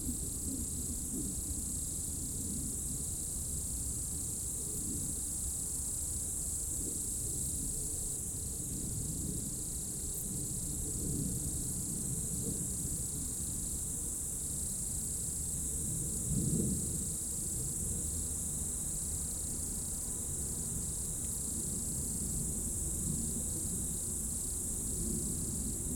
route col du Chat, Bourdeau, France - Tutti insectes
Dans la première épingle de la route du col du Chat à Bourdeau, insectes dans le talus et les arbres au crépuscule, avion, quelques véhicules. Enregistreur Tascam DAP1 DAT, extrait d'un CDR gravé en 2006.